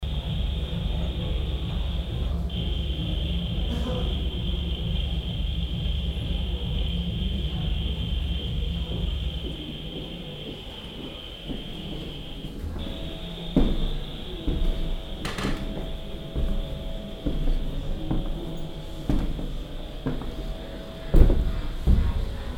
basel, dreispitz, shift festival, installation in zugwagon 01
soundmap international
social ambiences/ listen to the people - in & outdoor nearfield recordings